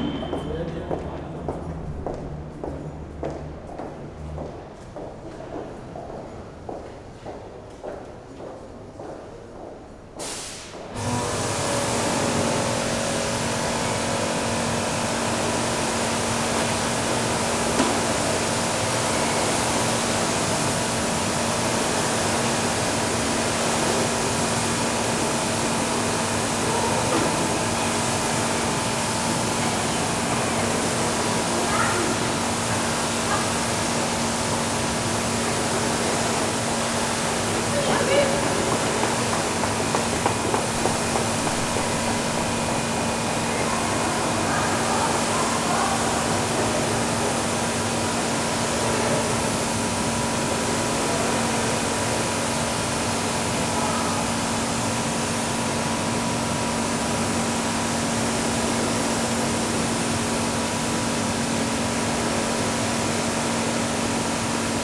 köln, neumarkt, u-bahn - workers cleaning stairs
köln neumarkt, u-bahn, subway passage, sunday night, workers cleaning stairway with high pressure cleaner